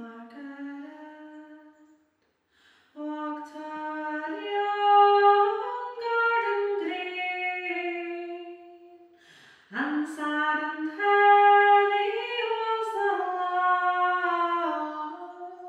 Melrose, Scottish Borders, UK - River Song - Kirsty Law, Clerk Saunders
Scots singer Kirsty Law singing the Border Ballad 'Clerk Saunders' inside the Summerhouse, Old Melrose, in the Scottish Borders. Recorded in September 2013, this well known Border Ballad is taken back into the heart of the environment from which it was produced, sung and eventually written. The Summerhouse at Old Melrose lies directly opposite Scott's View. The piece explores the song in the context of the resonance of the architecture of the historic building.
September 2013